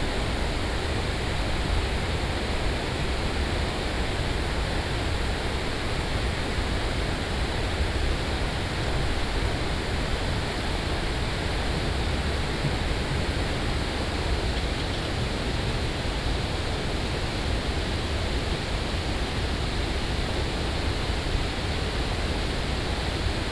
石岡水壩, Shigang Dist., Taichung City - barrage dam
a concrete gravity barrage dam, Binaural recordings, Sony PCM D100+ Soundman OKM II
Taichung City, Taiwan